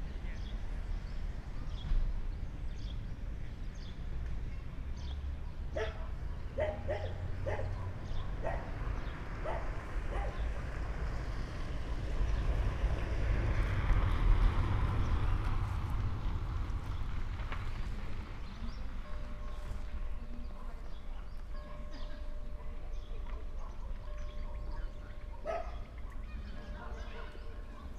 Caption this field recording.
while waiting for departure, a short walk around the station in Lom, which in fact does not exist..., except that a train stops here... (Sony PCM D50, Primo EM 172)